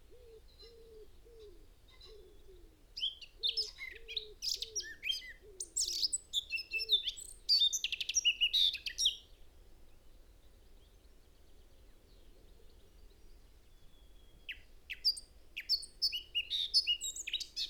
Malton, UK - blackcap in song ...
blackcap song ... dpa 4060s in parabolic to mixpre3 ... bird calls ... song ... from chaffinch ... wood pigeon ... goldfinch ... pheasant ... blackbird ... blue tit ... crow ... this sounded like no blackcap had heard before ... particularly the first three to five minutes ... both for mimicry and atypical song ...